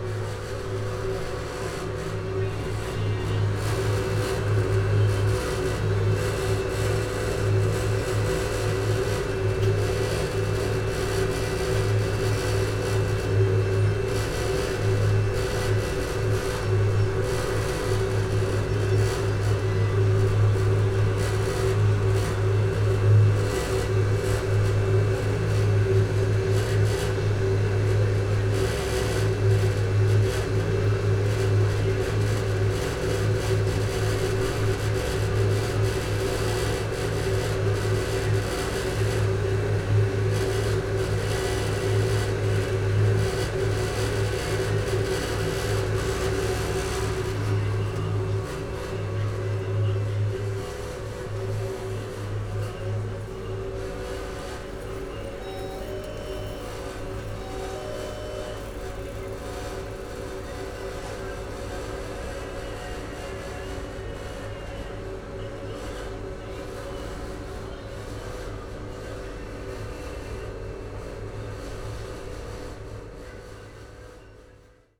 recorded at the open window of a laundry, noisy cleaning machines from the laundry
(SD702, DPA4060)